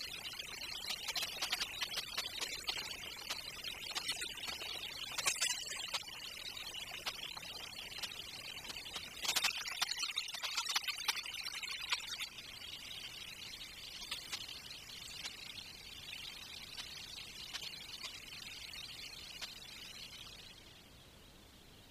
Palast der Republik demolition
Workers cut concrete floor into sections.